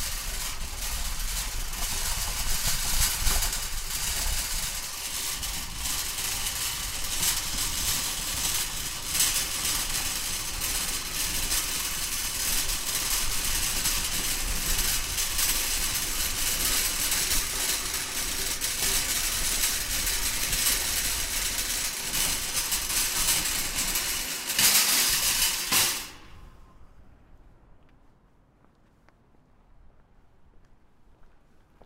trolley rattles outside Value House
England, United Kingdom, European Union